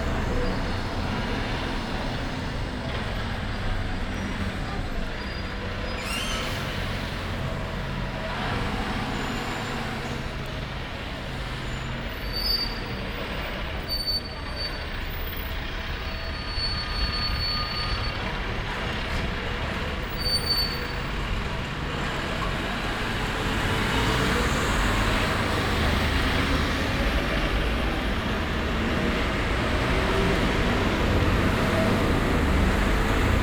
Wednesday, October 14th 2020: Paris is scarlett zone fore COVID-19 pandemic.
One way trip back riding the metro form Odeon to Gare du Nord and walking to airbnb flat. This evening was announced the COVID-19 curfew (9 p.m.- 6 a.m.) starting form Saturday October 17 at midnight.
Start at 9:57 p.m. end at 10:36 p.m. duration 38’45”
As binaural recording is suggested headphones listening.
Both paths are associated with synchronized GPS track recorded in the (kmz, kml, gpx) files downloadable here:
For same set of recording go to:
14 October 2020, 21:57